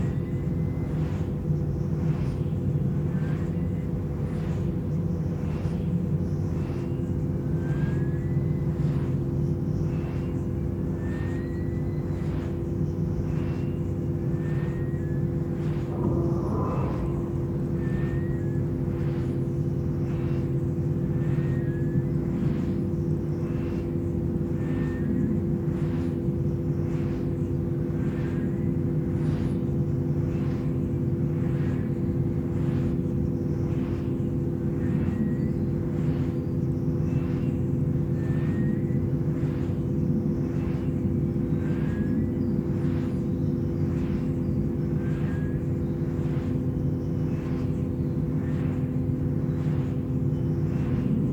Wind generators are a green face of energy production in this area otherwise dominated by huge opencast brown coal mines and associated power stations. All are owned by the company RWE AG, one of the big five European energy companies. Each wind generator has different sound. Here the transformer close to the foot of the generator hums with the wind sounds.